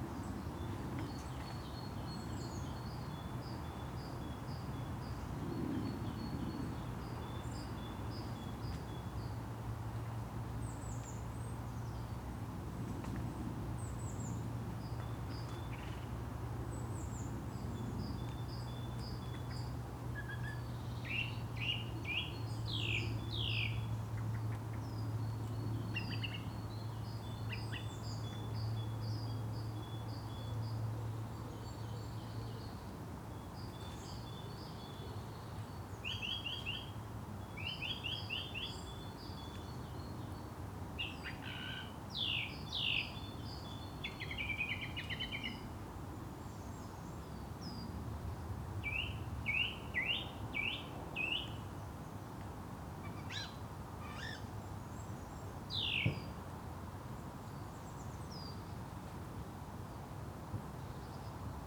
Wentbridge, UK - Wentbridge birdsong
There's an interesting bird song with a bit of variation which stops and starts. You can also hear some distant hunting gunshots, distant traffic, and occasionally dogs and people walking in the wood.
(rec. zoom H4n)